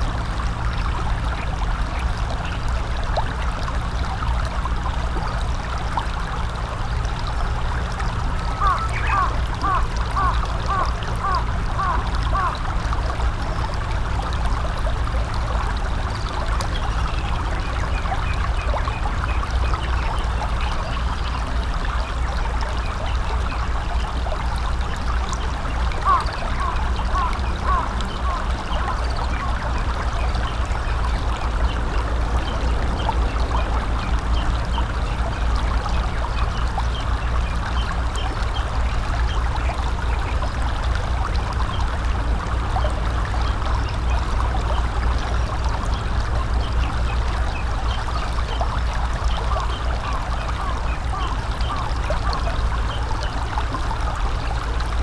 Yasugawa (river) small rapids, crow, Japanese bush warbler, aircraft, and traffic on a nearby bridge, Shinjo Ohashi.